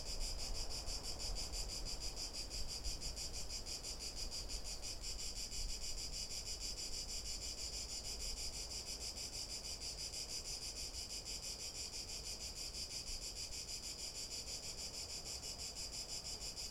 Una tarde de paseo por la Albufera de Valencia, una joya de la Comunidad Valenciana. Paseamos alrededor del lago, en este caso por unas pasarelas en donde nos paramos para grabar a una Chicharra [Cicadidae]. Se puede escuchar también un "piú-piú" de un ave que no logro saber cual es, lo que si se escucha es una Curruca Cabecinegra [Sylvia melanocephala] en el segundo 59 mas o menos. Se escucha el sonido del mar que está muy cerca y del lago, y algún coche pasar del parking cercano.